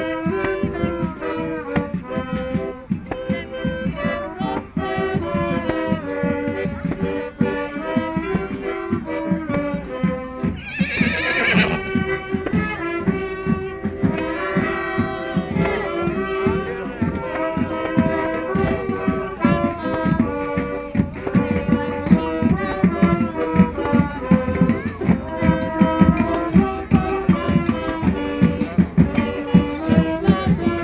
Carneval, masopust
Masopust celebration with sheep flock
Roztoky, Czech Republic, 14 February, 16:44